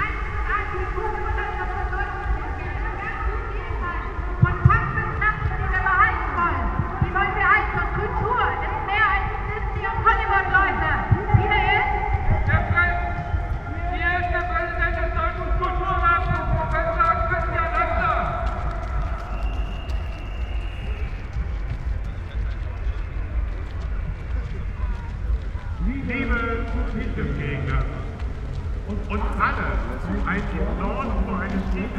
Tiergarten, Berlin, Deutschland - distant sounds from anti TTIP demonstration
distant sounds from the big anti TTIP demonstration, 200000+ people on the streets. heard from a distant place within Tiergarten park.
(Sony PCM D50, Primo EM172)